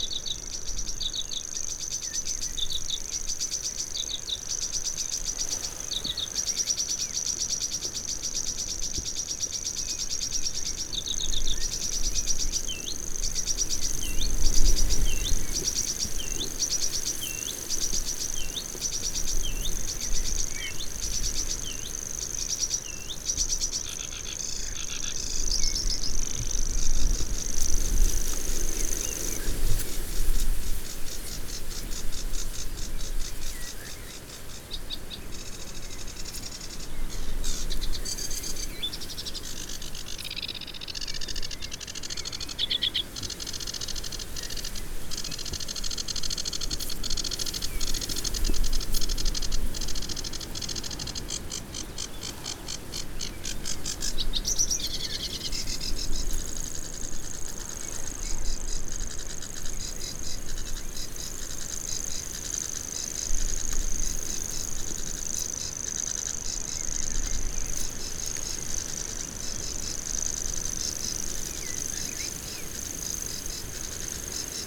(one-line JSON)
{"title": "Argyll and Bute, UK - Reedbed songsters ...", "date": "2011-05-18 05:30:00", "description": "Reedbed songsters ... Dervaig ... Isle of Mull ... bird song from grasshopper warbler ... sedge warbler ... calls from grey heron ... common gull ... edge of reed bed ... lavaliers in parabolic ... much buffeting ...", "latitude": "56.59", "longitude": "-6.19", "altitude": "2", "timezone": "GMT+1"}